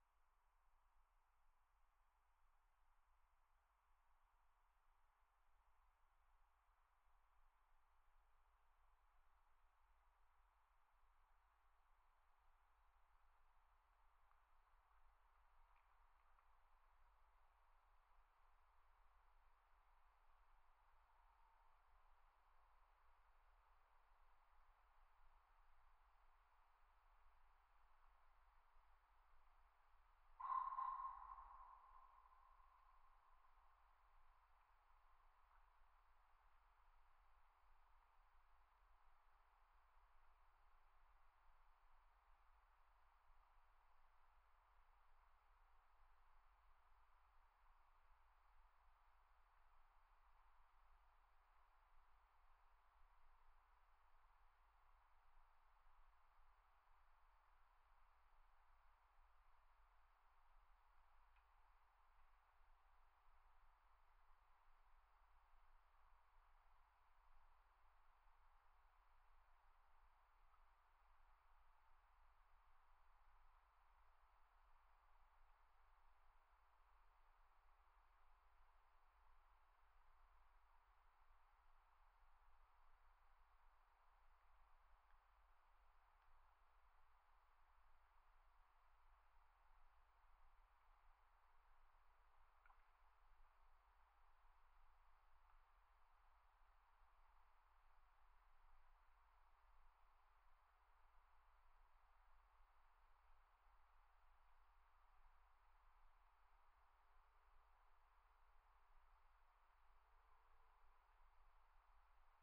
I made a similar recording in the same spot a year ago and wanted to compare the two bearing in mind the C19 lockdown. There are hardly any planes and the roads are a lot quieter. Sony M10